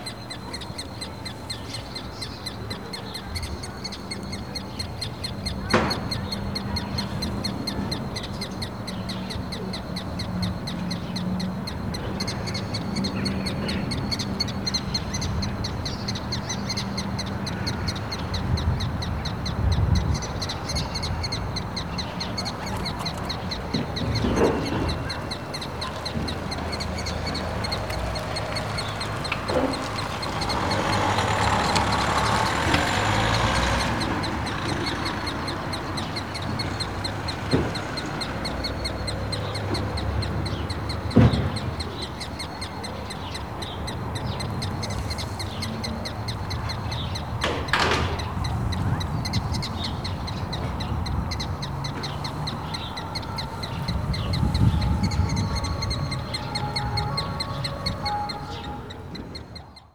Poznan, Jana III Sobieskiego housing estate - hyped birds
a bunch of excited birds swarming on the top of a residential building.